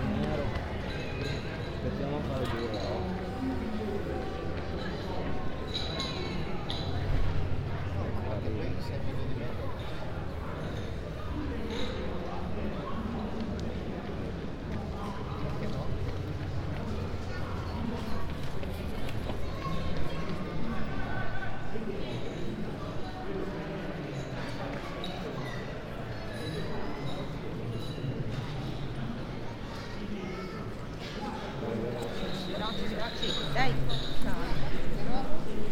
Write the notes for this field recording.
METS-Conservatorio Cuneo: 2019-2020 SME2 lesson1B, “Walking lesson SME2 in three steps: step B”: soundwalk, Thursday, October 1st 2020. A three step soundwalk in the frame of a SME2 lesson of Conservatorio di musica di Cuneo – METS department. Step B: start at 10:22 a.m. end at 10:39, duration of recording 17’02”, The entire path is associated with a synchronized GPS track recorded in the (kmz, kml, gpx) files downloadable here: